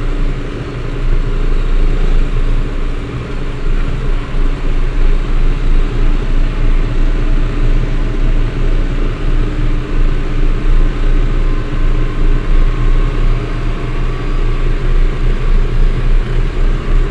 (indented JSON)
{
  "title": "hoscheid, geisseck, tractor on the street",
  "date": "2011-06-05 19:15:00",
  "description": "A short drive with a typical agriculture tractor of the region driving on the street.\nHoscheid, Geisseck, Traktor auf der Straße\nEine kurze Fahrt auf der Straße mit einem typischen landwirtschaftlichen Traktor aus der Region.\nHoscheid, Geisseck, tracteur sur la route\nUn petit tour sur la route avec un tracteur typique de l’agriculture de la région.\nProjekt - Klangraum Our - topographic field recordings, sound objects and social ambiences",
  "latitude": "49.95",
  "longitude": "6.08",
  "altitude": "490",
  "timezone": "Europe/Luxembourg"
}